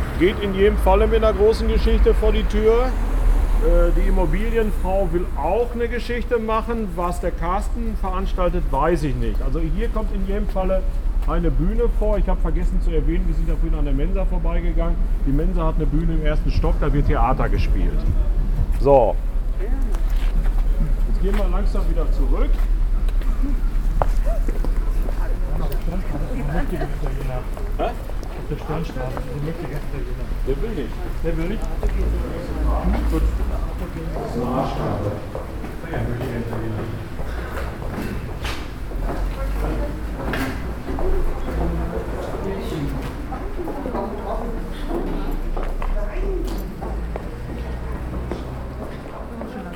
We are joining here a guided tour through the city’s art and artists’ quartiers, the “Martin-Luther Viertel” in Hamm. Chairman Werner Reumke leads members of the area’s support associations (“Förderverein des Martin-Luther-Viertels”) through the neighborhood. Only two weeks to go till the big annual Arts-Festival “La Fete”…
Wir folgen hier einer ausserordentlichen Stadtführung durch das Martin-Luther-Viertel, das Kunst und Künstlerviertel der Stadt. Werner Reumke, Vorsitzender des Fördervereins begeht das Quatier zusammen mit Vereinsmitgliedern. Nur noch zwei Wochen bis zum grossen jährlichen Kunst- und Kulturfest “La Fete”…
recordings are archived at: